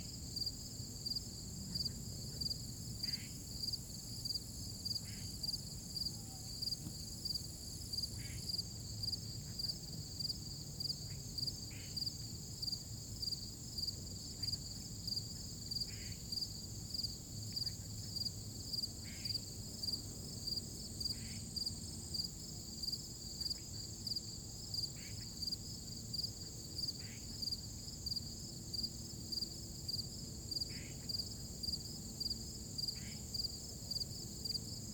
Queeny Park, Town and Country, Missouri, USA - Emergency Locator 25
Recording from emergency locator 25